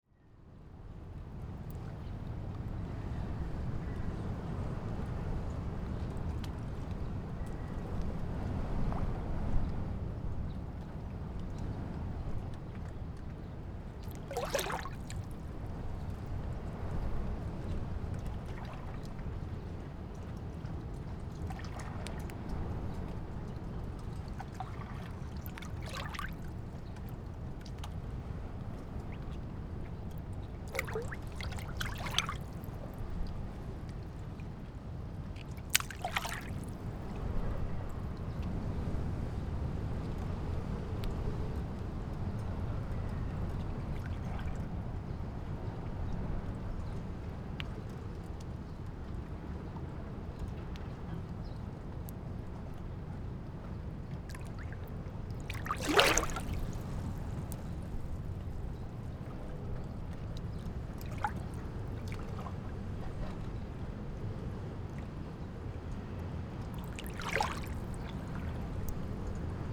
菜園海洋牧場遊客碼頭, Magong City - In the dock
In the dock, Waves and tides, Wind
Zoom H6+Rode NT4